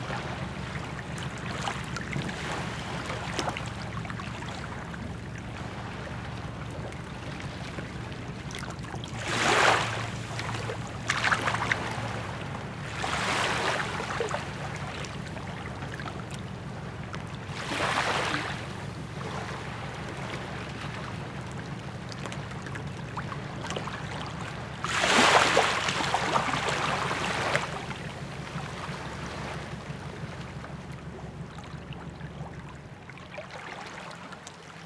Water in Port Racine, the littlest harbor in France, Zoom H6
Saint-Germain-des-Vaux, France, 8 December 2015